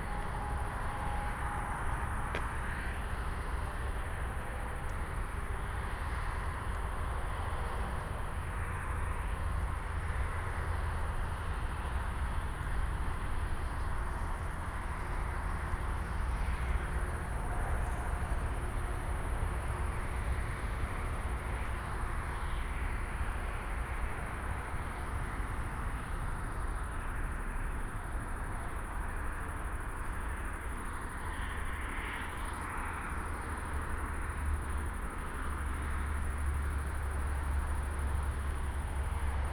Karow, Buch, Berlin - walking to Karow, crickets, train, Autobahn, soundsystem
night walk from Berlin Buch Moorlinse to Karow, listening to intense cricket sounds, a S-Bahn train, passing the Autobahn bridge, violent traffic noise, then crickets again, later Italian tree crickets with its low-pitched sounds, then 3 youngsters w/ a boom box, hanging out under a bridge in the dark
(Sony PCM D50, Primo EM172)
Germany, 12 August, ~22:00